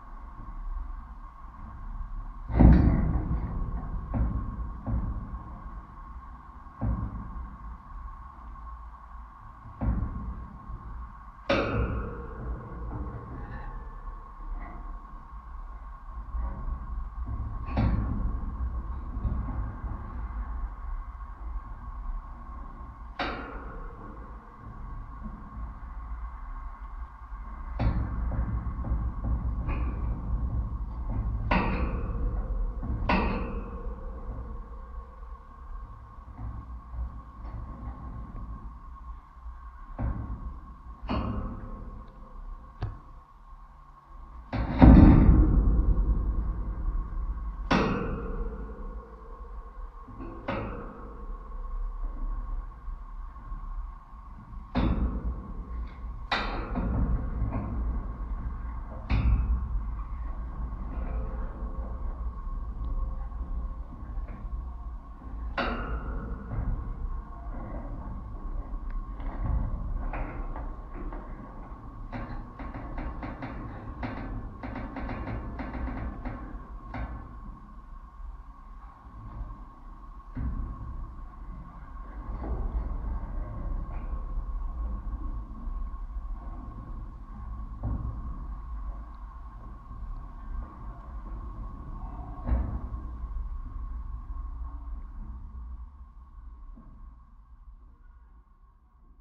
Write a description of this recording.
Dual contact microphone recording of a metal fence, placed beneath a large willow tree. Tree branches are swaying in the wind and brushing against the fence, resulting in random reverberating percussive sounds. Also, a nearby highway traffic sounds are heard through the fence as a persistent resonant drone.